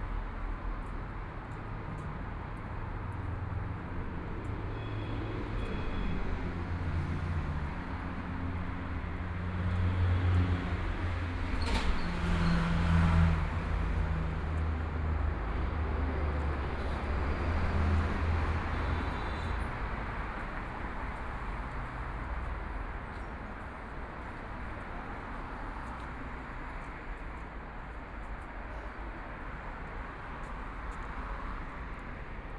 South Tibet Road, Shanghai - on the road
Walking on the road, Traffic Sound, Binaural recording, Zoom H6+ Soundman OKM II
Huangpu, Shanghai, China, 26 November